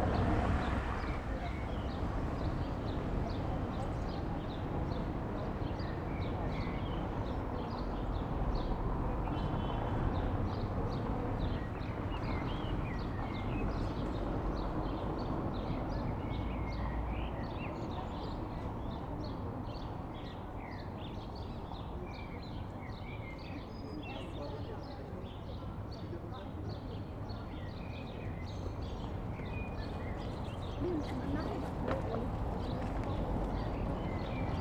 Berlin: Vermessungspunkt Friedel- / Pflügerstraße - Klangvermessung Kreuzkölln ::: 10.06.2011 ::: 19:05
Berlin, Germany, 10 June 2011, ~19:00